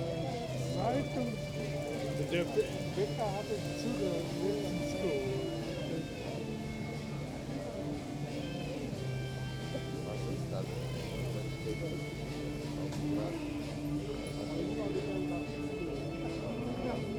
berlin wall of sound - lohmuhle sommerfest. f.bogdanowitz 29/08/09
Berlin, Germany